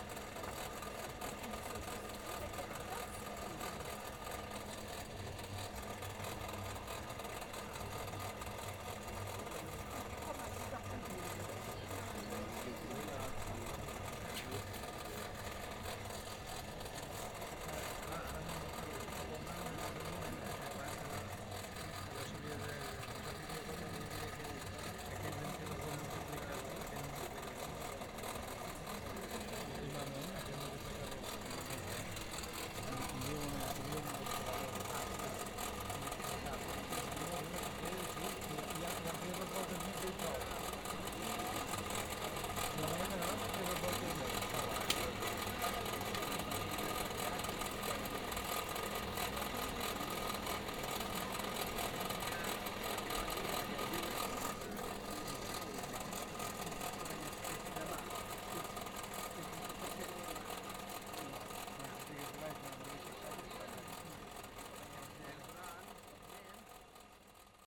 vianden, castle, blacksmith
A blacksmith working with traditional tools and an open fire, demonstrating traditional handcraft skills surrounded by Visitors.
Vianden, Schloss, Schmied
Ein Schmied arbeitet mit traditionellen Werkzeugen und einem offenen Feuer, er demonstriert traditionelle Handwerkskunst, umringt von Zuschauern.
Vianden, château, forgeron
Un forgeron travaillant avec des outils traditionnels sur un feu ouvert, présentant l’art traditionnel de son métier aux visiteurs qui l’entourent.
Project - Klangraum Our - topographic field recordings, sound objects and social ambiences
Vianden, Luxembourg, 9 August